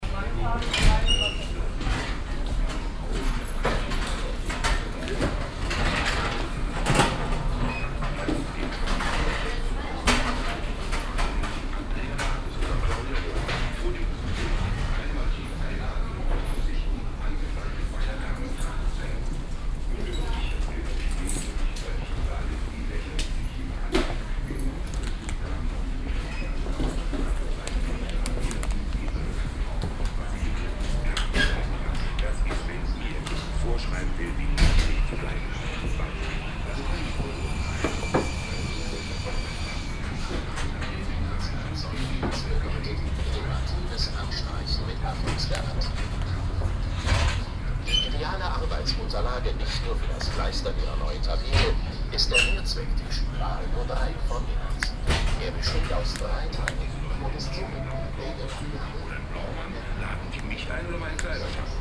cologne, barbarossaplatz, baumarkt
atmosphäre im baumarkt, morgens
soundmap nrw: social ambiences, art places and topographic field recordings